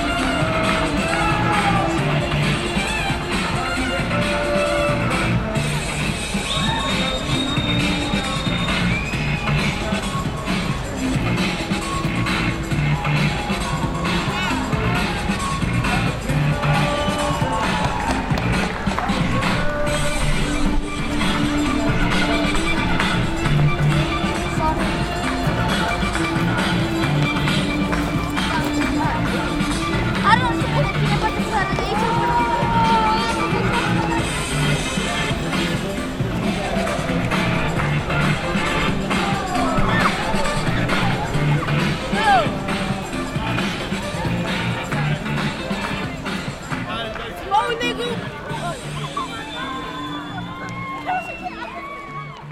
Londres, Royaume-Uni - HipHop
A hip hop dancer in front of National Gallery, London, Zoom H6
UK